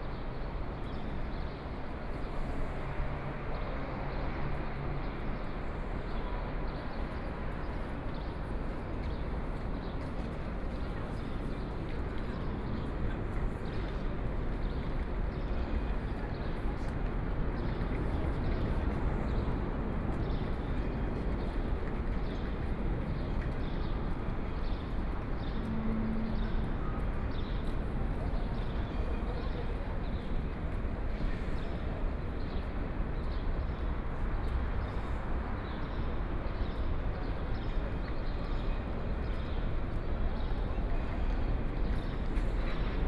Munich International Airport, Germany - In the Square
In the Square, Birdsong